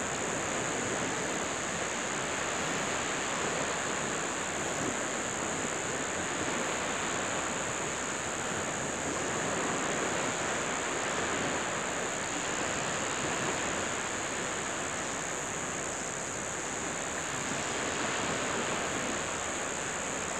Cape Tribulation, QLD, Australia - dusk on myall beach
sound from my film "Dusk To Evening On Myall Beach".
microphone was placed on the sand facing the forest which gives an odd sound to the recording.
recorded with an AT BP4025 into an Olympus LS-100.